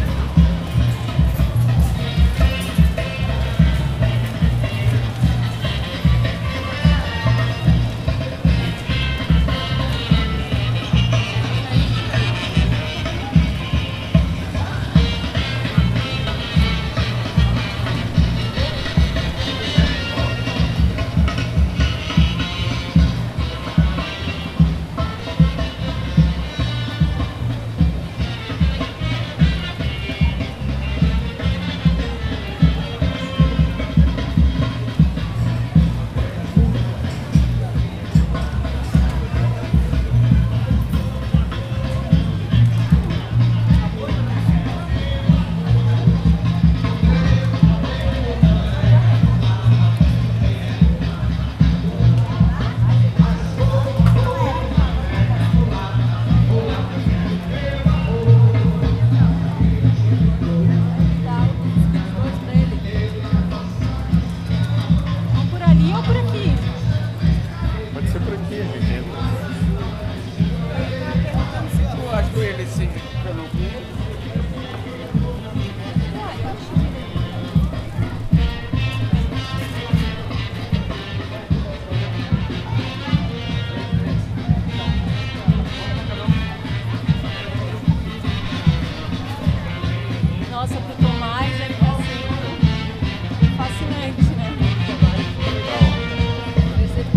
Felipe Schimidt Street, Florianópolis
This is the most popular street in the downtown Florianopolis in a saturday morning. One can here people doing groceries and street musicians. At the end, it is possible to hear a street band reharsing for the upcoming carnival.